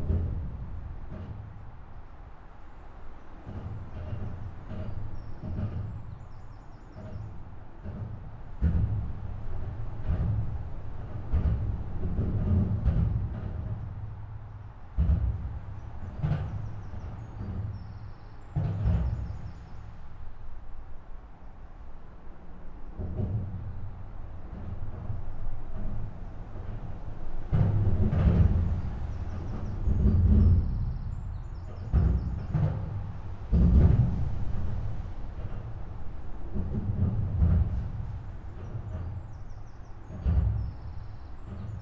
Recorded with a Zoom H1n with 2 Clippy EM272 mics arranged in spaced AB.